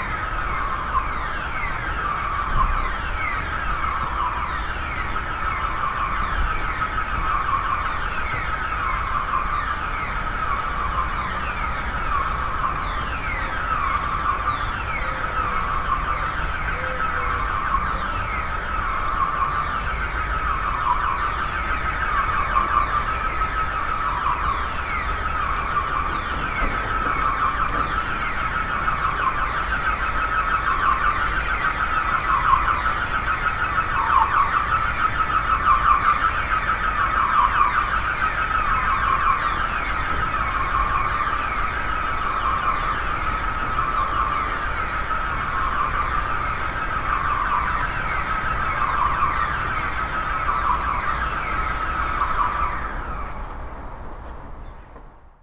Poznan, balcony - annoyed house
a burglar alarm blasting all morning long in one of the houses in the Marysienki housing estate. (sony d50)